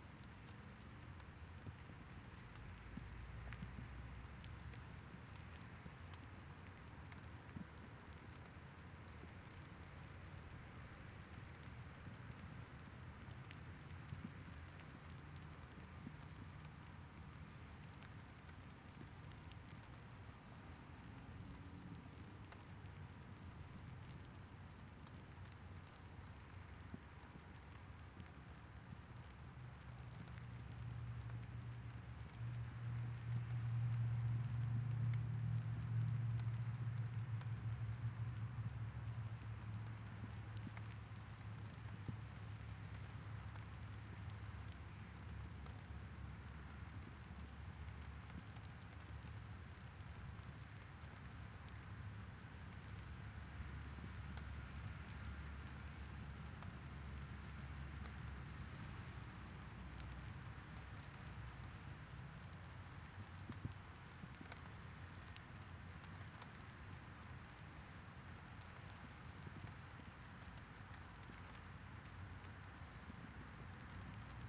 McKinley St NW, Ramsey, MN, USA - Ramesy - Staples Subdivision
Recording made next to the Staples Subdivision rail road tracks in Ramsey, Minnesota. One train goes by during the recording. It was a rainy March day and the recorder was being sheltered by a cardboard box so the sound of the rain on the box can be heard aas well as drops falling on the windscreen. This location is adjacent to a garbage truck depot as well as a gravel pit so noises from that can also be hear. Nearby Highway 10 traffic can be heard as well.
This was recorded with a Zoom H5